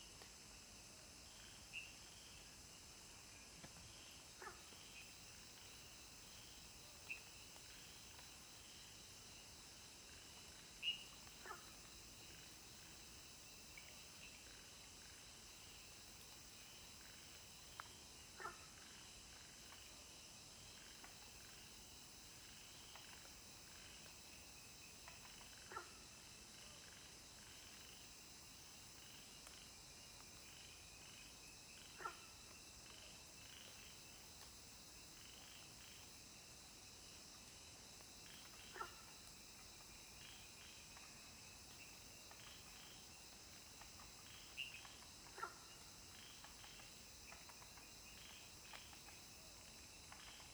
種瓜路 桃米里, Puli Township - bamboo forest
Sound of insects, Frogs chirping, bamboo forest
Zoom H2n MS+XY